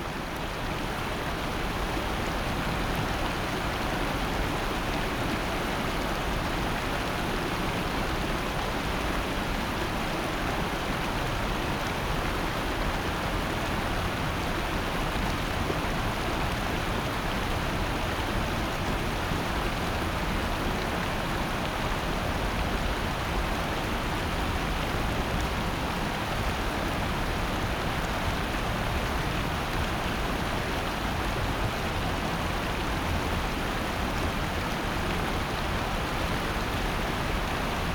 대한민국 서울특별시 서초구 양재동 261-23 - Yangjaecheon, Summer, Stream Flowing
양재천, 장마철 비온 뒤 물이 불었을 때의 소리.
Yangjaecheon Stream, monsoon season. flowing water